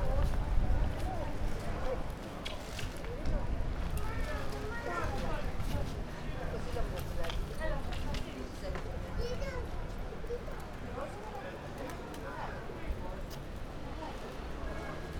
a few tourists and locals were siting on a low wall, waiting for their kids to get tired chasing a numerous flock of pigeons. the birds moving around, flapping their wings, city ambience
28 September, ~11:00